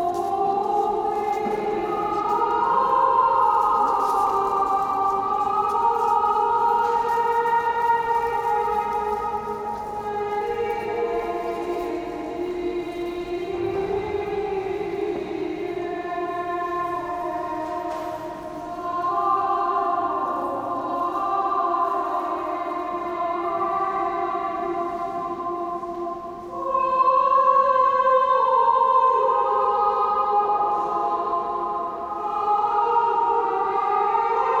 Moscow Immaculate Conception Catholic Cathedral Novus Ordo part2